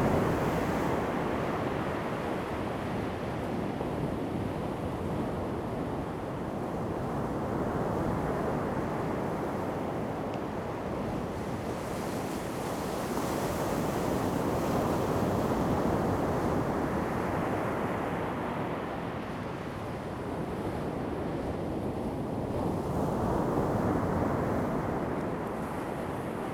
Chenggong Township, Taitung County - Sound of the waves
The weather is very hot, Sound of the waves
Zoom H2n MS +XY
8 September 2014, 11:36